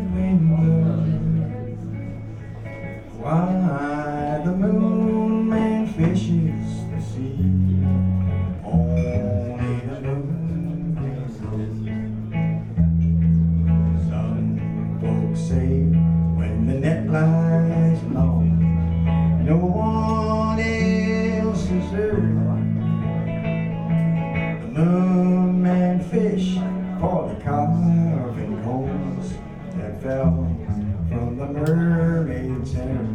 {"title": "berlin, skalitzer straße: monarch club - the city, the country & me: monarch club", "date": "2013-05-15 22:14:00", "description": "michael hurley in concert\nthe city, the country & me: may 15, 2013", "latitude": "52.50", "longitude": "13.42", "altitude": "39", "timezone": "Europe/Berlin"}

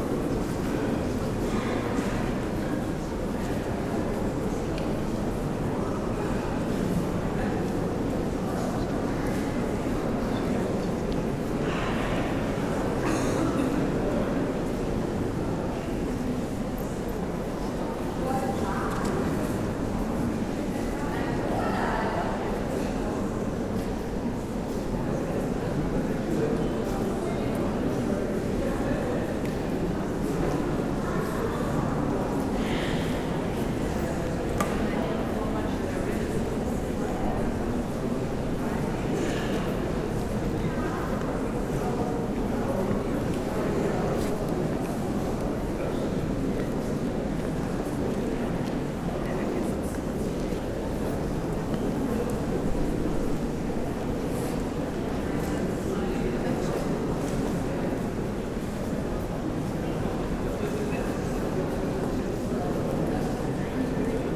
{"title": "berlin, potsdamer straße: neue nationalgalerie - the city, the country & me: new national gallery", "date": "2012-04-26 15:00:00", "description": "audience during the exhibition \"gerhard richter panorama\"\nthe city, the country & me: april 26, 2012", "latitude": "52.51", "longitude": "13.37", "altitude": "34", "timezone": "Europe/Berlin"}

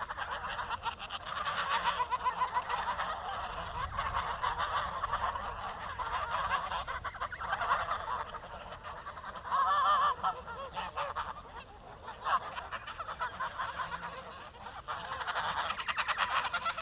{"title": "Güstebieser Loose, Neulewin - river Oder dike, a flock of geese", "date": "2015-08-29 13:45:00", "description": "Güstebieser Loose, Oderbruch, at the river Oder, on the dike, listening to a flock of fat geese.\n(Sony PCM D50, DPA4060)", "latitude": "52.77", "longitude": "14.29", "altitude": "2", "timezone": "Europe/Berlin"}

